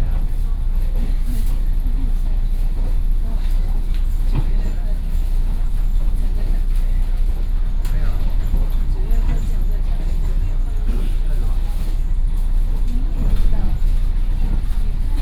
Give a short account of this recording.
inside the Trains, Sony PCM D50 + Soundman OKM II